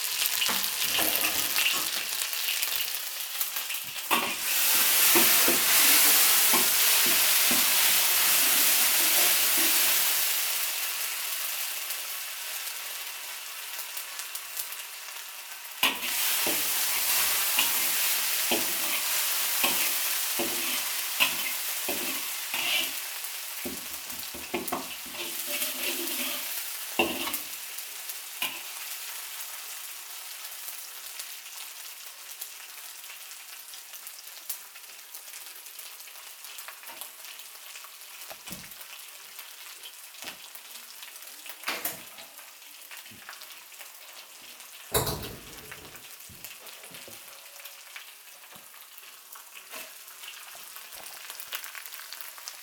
Rodenkirchen, Köln, Deutschland - cologne, rodenkirchen, simple, cooking
Inside the simple company kitchen. The sound of a gas stove ignition followed by the sound of hot oil and mashed vegetable ingreedients.
soundmap nrw - social ambiences and topographic field recordings
Cologne, Germany, 22 May 2012, 11:00